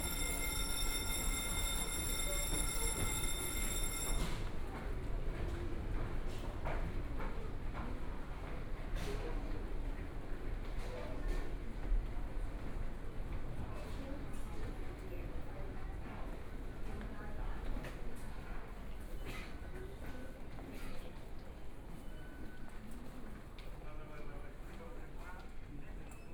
Taipei Main Station, Taiwan - soundwalk
From the train station platform, Direction to MRT station, walking in the Station
Please turn up the volume
Binaural recordings, Zoom H4n+ Soundman OKM II